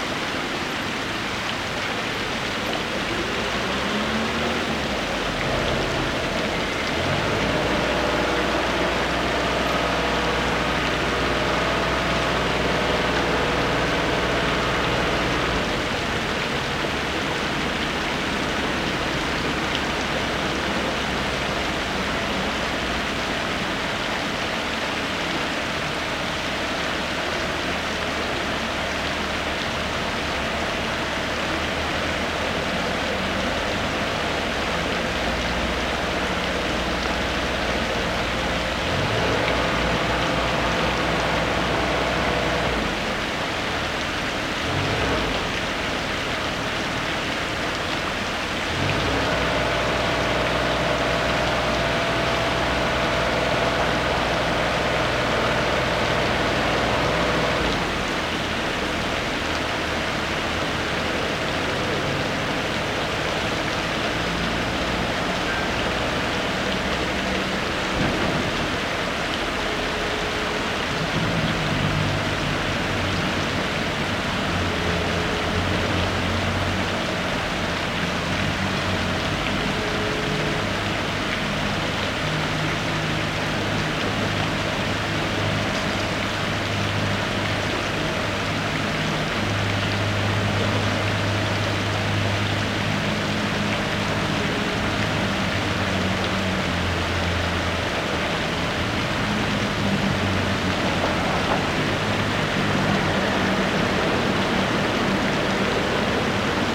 Torvet, Arendal, Norway - Water from fountain, deliveries being unloaded and children playing on the playground.
Recorded with Tascam DR-40 out of a 3rd floor office building pointing down to the square where you can hear children playing while water dances from the fountain | Andrew Smith
Agder, Norge